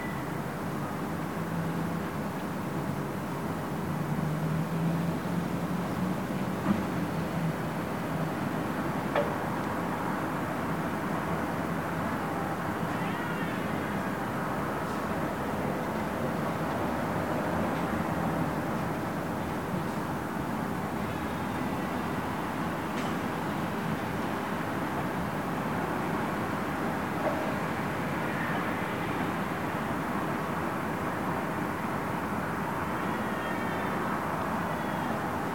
ул. Новая, Нижний Новгород, Нижегородская обл., Россия - evening
this sound was recorded by members of the Animation Noise Lab
evening at the street